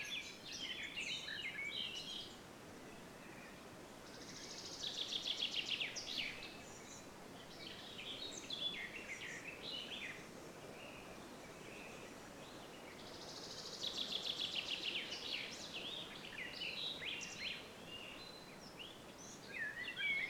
Utena, Lithuania, soundscape with birdies
21 June 2012, 17:20